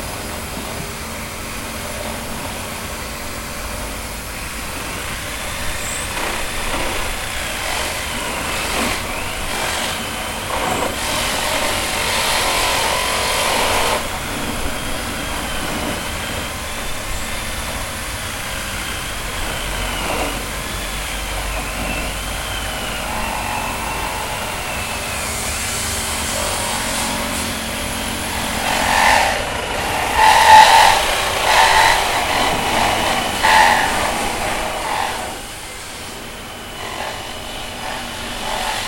Albert Park
Work Sight Buzz Saw
Auckland, New Zealand